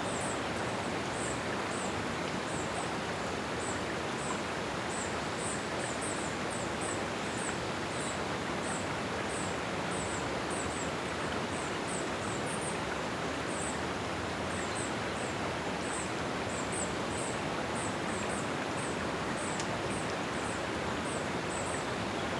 {
  "title": "SBG, Gorg Negre, Obaga del Pujol - Al borde de la ladera",
  "date": "2011-07-17 18:20:00",
  "description": "Paisaje en calma en este enclave de la Riera del Sorreigs. Aves e insectos, suaves ráfagas de viento y el rumor contínuo del torrente al fondo del barranco.",
  "latitude": "42.01",
  "longitude": "2.18",
  "altitude": "630",
  "timezone": "Europe/Madrid"
}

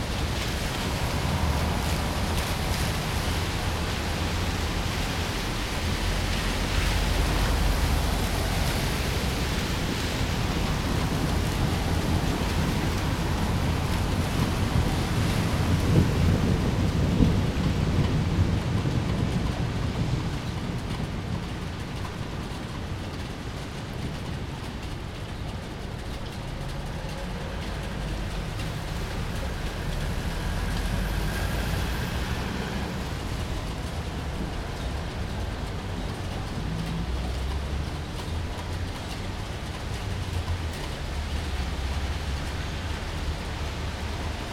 {
  "title": "Maribor, Slovenia - Rainy street in Maribor",
  "date": "2008-06-10 16:50:00",
  "description": "Just another rainy day...",
  "latitude": "46.56",
  "longitude": "15.65",
  "altitude": "268",
  "timezone": "Europe/Ljubljana"
}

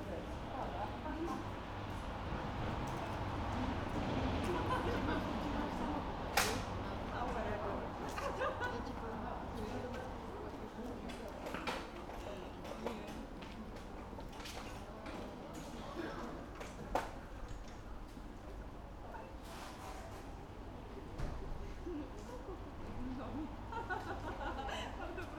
{
  "title": "Poznań, Jerzyce district, Rialto Cinema - people leaving the cinema after the show",
  "date": "2012-08-28 21:44:00",
  "description": "late evening, wet ambience in front of the cinema, spectators leaving the building, everybody in great mood after watching the last Woody Allen movie. the employees are closing the cinema.",
  "latitude": "52.41",
  "longitude": "16.91",
  "altitude": "84",
  "timezone": "Europe/Warsaw"
}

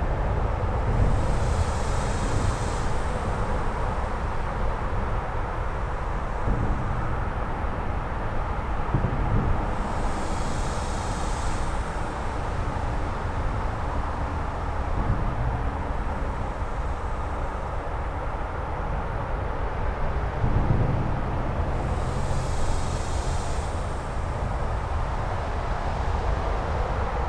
{
  "title": "N Mopac Expy, Austin, TX, USA - Beneath the northbound 183 flyover",
  "date": "2020-07-18 10:32:00",
  "description": "Recorded with an Olympus LS-P4 and a pair of LOM Usis hung from tree branches. This is a space that is mostly inaccessible. The voices of the cicadas are very strong; they are drowned out by the overwhelming thrum of traffic but are in a different frequency range so still audible.",
  "latitude": "30.38",
  "longitude": "-97.74",
  "altitude": "236",
  "timezone": "America/Chicago"
}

